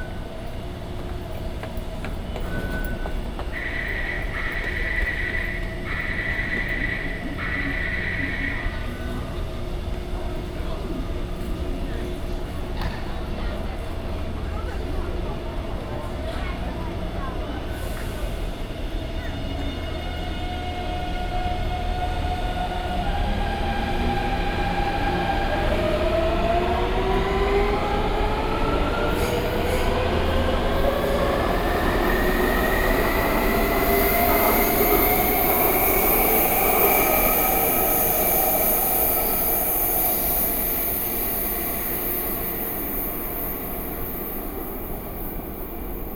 Yongchun Station, Xinyi District - Into the MRT stations
Taipei City, Taiwan